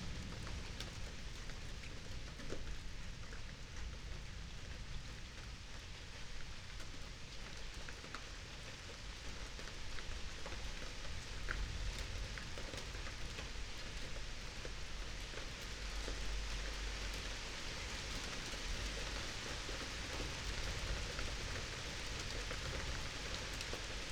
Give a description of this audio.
00:35 Berlin Bürknerstr., backyard window, light rain and distant thunderstorm around midnight, (remote microphone: AOM5024HDR | RasPi Zero /w IQAudio Zero | 4G modem